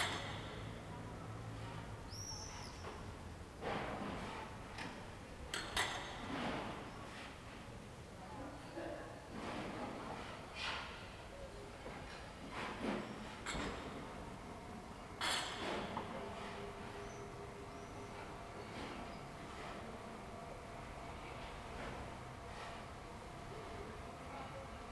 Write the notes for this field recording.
"Afternoon with building-yard noise in the time of COVID19" Soundscape, Chapter XCIV of Ascolto il tuo cuore, città. I listen to your heart, city. Monday, June 1st 2020. Fixed position on an internal terrace at San Salvario district Turin, eighty-three days after (but day twenty-nine of Phase II and day sixteen of Phase IIB and day ten of Phase IIC) of emergency disposition due to the epidemic of COVID19. Start at 9:34 a.m. end at 10:14 a.m. duration of recording 39’50”.